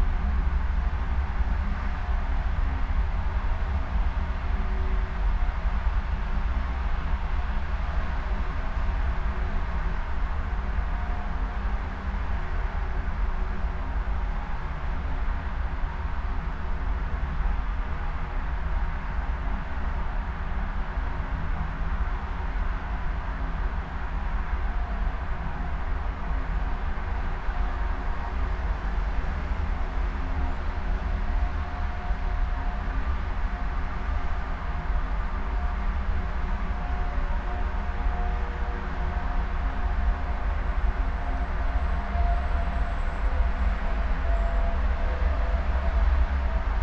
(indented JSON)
{
  "title": "Tempelhofer Park, Berlin, Deutschland - Autobahn in dustbin",
  "date": "2014-10-25 12:35:00",
  "description": "Tempelhof former airport area, info point under contruction, near motorway A100, traffic noise heard in a dustbin\n(SD702, DPA4060)",
  "latitude": "52.47",
  "longitude": "13.40",
  "altitude": "47",
  "timezone": "Europe/Berlin"
}